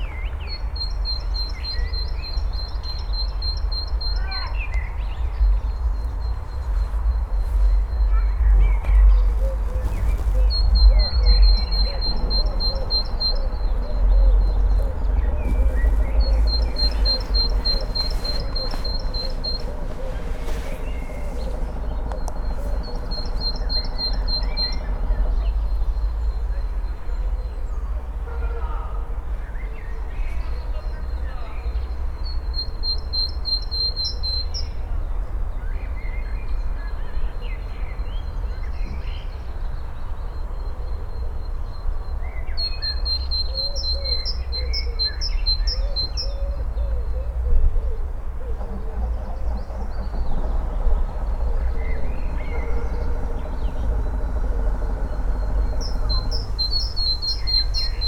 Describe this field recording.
bird communication on a back road. (roland r-07)